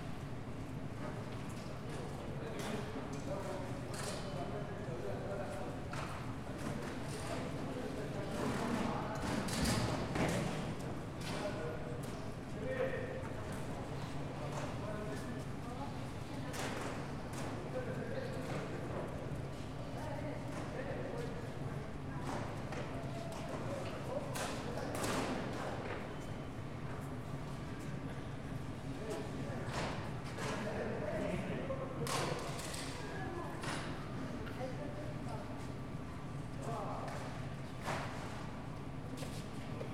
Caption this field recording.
Garibaldi metro station (internal microphones on Tascam DR-40)